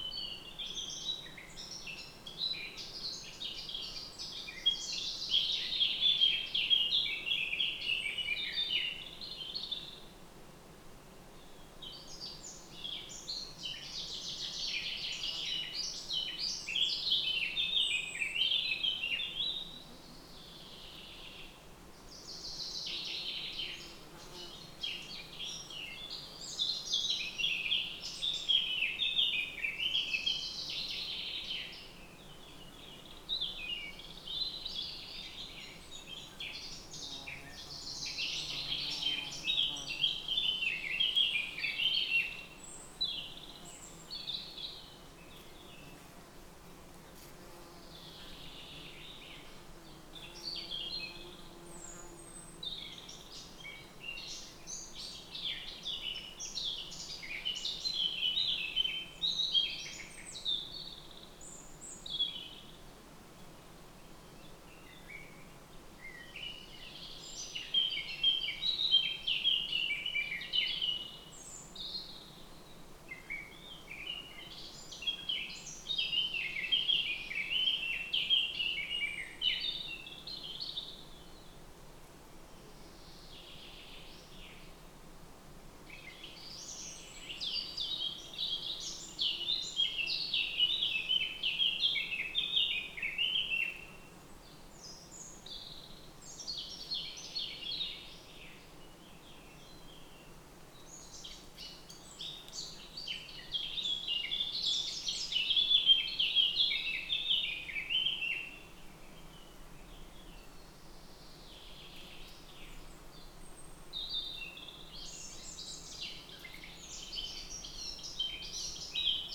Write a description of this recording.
Birds in forest. lom Uši Pro, MixPreII